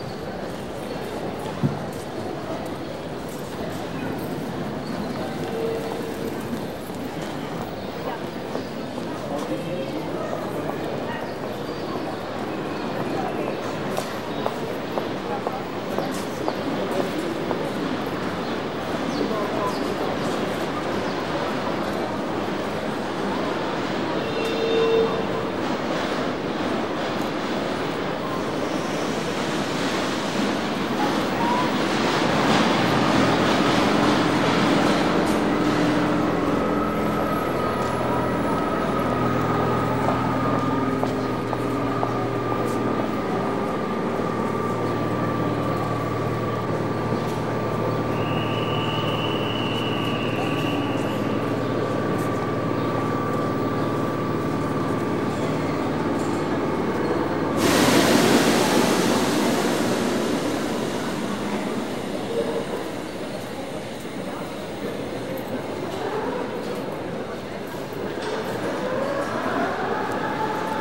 paris, gare d'austerlitz, at ticket barriers
inside the station at the ticket barriers as a train arrives. announcements, passing steps, voices and station waggons
international cityscapes - social ambiences and topographic field recordings